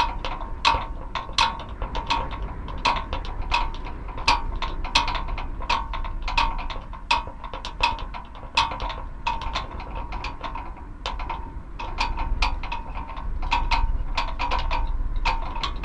Composite 4 contact microphones recording of a street light pole with some loose wires tumbling polyrhythmically inside. During stronger gushes of wind, the pole is vibrating more and the wires inside begin screeching loudly.